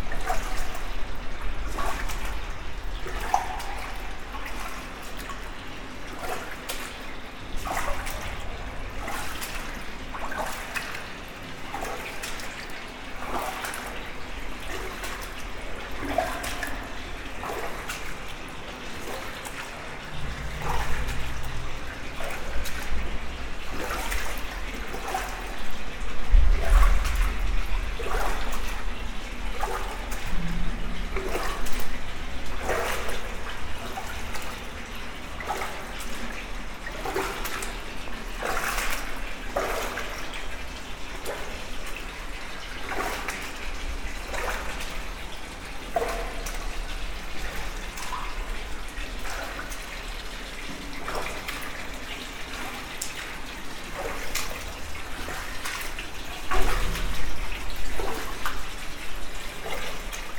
Nivelles, Belgium - Walking in the sewers
Walking in the Nivelles sewers. Its very very dirty and theres rats everywhere. Im worried about this, it could be dangerous. Thats why Im walking slowly.